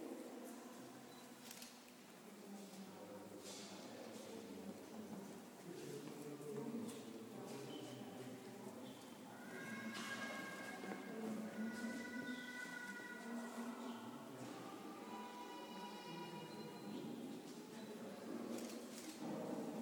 Saalburg, Bad Homburg
Generations, Ausstellungsraum, Geräusche
Germany